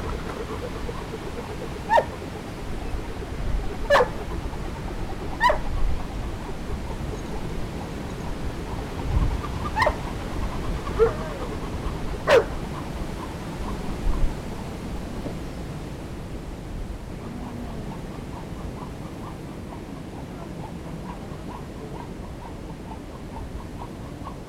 Velemín, Velemín, Czechia - Wind and rubber bands at Milešovka
The highest mountain in the České středohoří, Milešovka (837m), also known as Hromová hora, Milleschauer, Donnersberg is the windiest place in the Czech Republic. The average wind speed is 30.5 km / h, with no wind on average 8 days a year, on average 280 days a year there is a strong wind, there are about 35 storms a year. If it is clear you can see from the top the Giant Mountains, the Jizera Mountains, the Šumava Mountains and sometimes the Alps. The Poet Petr Kabeš watched the weather at the Meteorological Observatory from 1974 to 1977. I tested how the wind could handle the stretched rubber band.